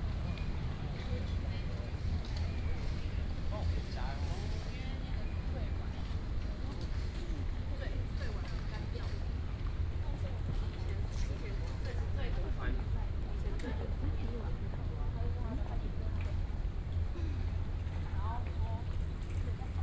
國立臺灣大學National Taiwan University, Taiwan - walking in the university
walking in the university, Bicycle sound, Footsteps
Da’an District, 舟山路272巷1號, 4 March, 17:27